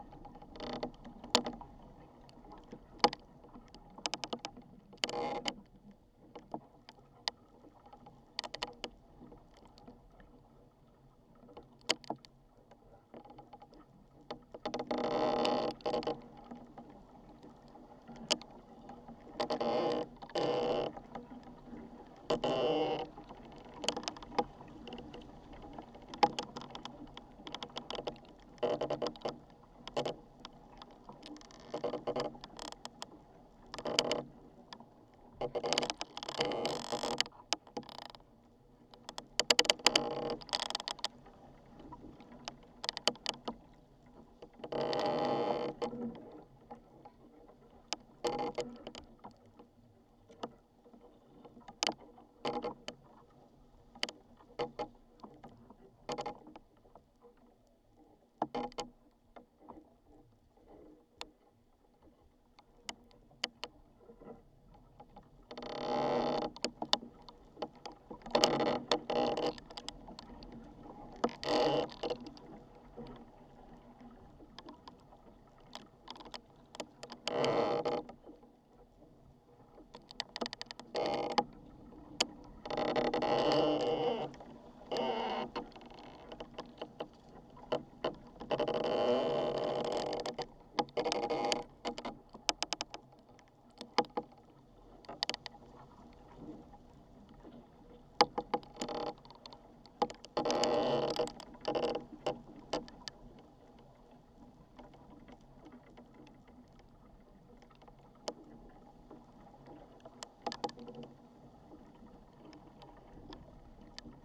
Tree in a wind. The recording is in two parts: the first his made with usual microphones, the second - with contact mics. It shows what processes and tensions happen in the tree